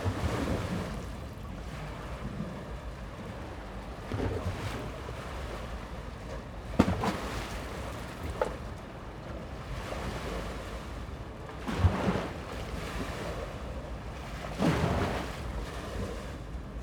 Thames waves on steps to the beach as the tide rises, Hopton St, London, UK - Thames waves on the steps to the beach as the tide rises
Greater London, England, United Kingdom, 16 May 2022